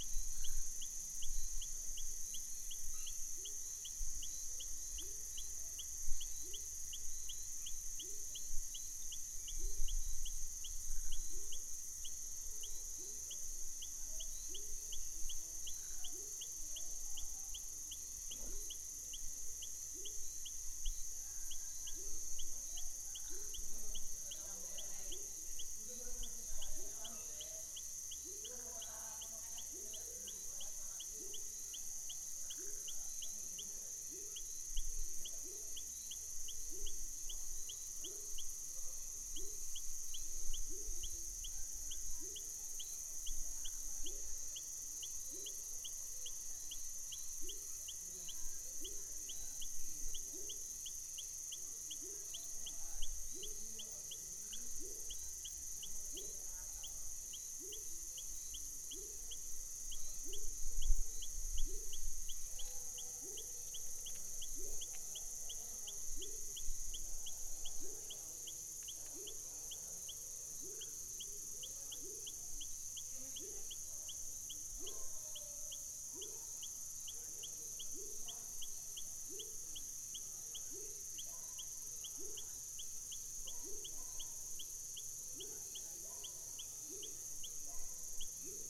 Trabalho realizado para a disciplina de Sonorização I - Marina Mapurunga - UFRB.
Flora Braga